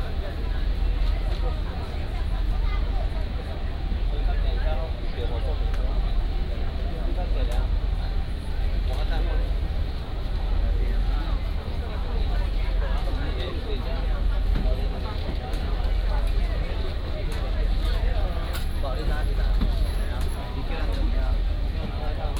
南寮漁港, Lüdao Township - In the cabin
In the cabin